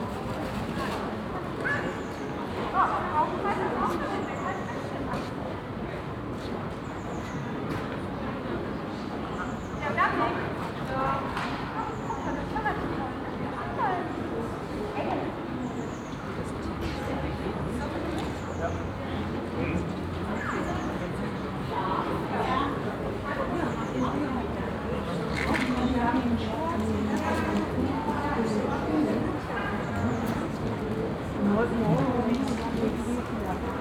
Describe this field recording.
Eine weitere, lange Aufnahme des Glockenspiels an einem milden, windigem Frühsommertag um 12Uhr miitags. Another recording of the bell play at 12o clock on a mild windy early summer day. Projekt - Stadtklang//: Hörorte - topographic field recordings and social ambiences